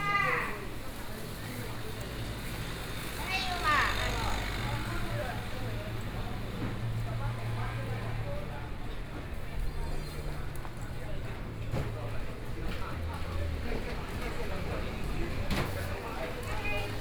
Minsheng St., Yilan City - Traditional Market
Walking in the traditional markets of indoor and outdoor, Binaural recordings, Zoom H4n+ Soundman OKM II
Yilan County, Taiwan, 5 November 2013